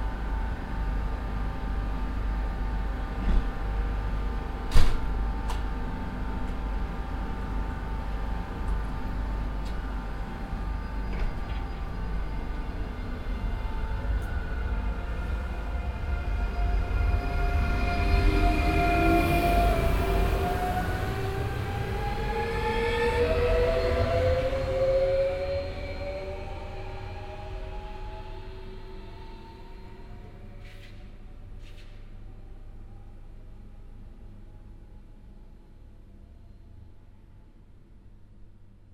At the Clervaux train station at noon on a hot and windy summer day.
People waiting for the hourly arriving train. The train drives into the station, people enter, a whistle, the train leaves.
Clervaux, Bahnhof
Am Bahnhof von Clervaux um die Mittagszeit an einem heißen und windigen Sommertag. Menschen warten auf den stündlich eintreffenden Zug. Der Zug fährt in den Bahnhof ein, Menschen steigen ein, ein Pfeifen, der Zug fährt ab.
Clervaux, gare ferroviaire
Midi à la gare ferroviaire de Clervaux, un jour d’été chaud et venteux. Des personnes attendent le train qui arrive toutes les heures. Le train entre en gare, des passagers montent, le train repart.
Project - Klangraum Our - topographic field recordings, sound objects and social ambiences
Luxembourg, July 2011